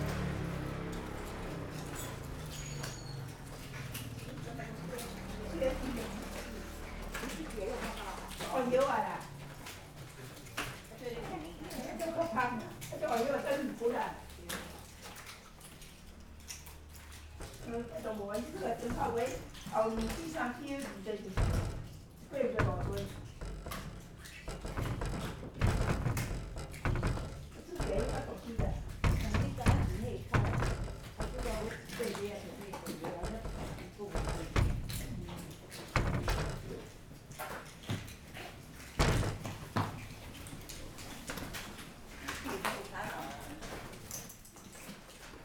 Guangming Rd., Fangyuan Township - Small villages
A group of old women are digging oysters, The wind and the sound vibrations generated window, Zoom H6
Changhua County, Taiwan, 4 January 2014